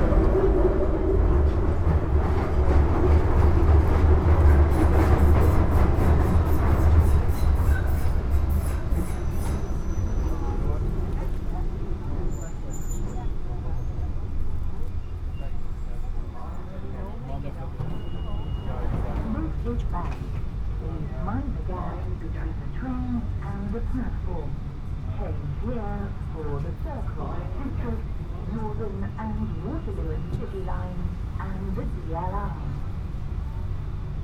Ride the London Tube from Bethnal Green to Paddington. - London, UK
A real-time journey on the London Underground from the East End at Bethnal Green to the main line Terminus at Paddington. Recorded with a Sound Devices Mix Pre 3 and 2 Beyer lavaliers.